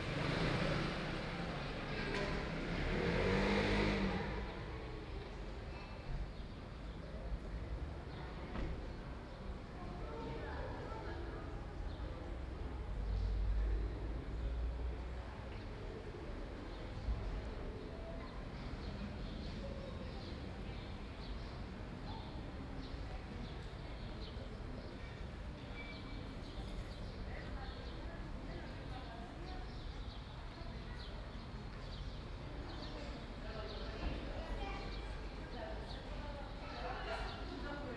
Liosion Bus Terminal, Athens, Greece - Liosion Bus Terminal 140516
The regional bus terminal on a spring Saturday morning. Binaural mics / Tascam DR40
Athina, Greece, 14 May, 10:15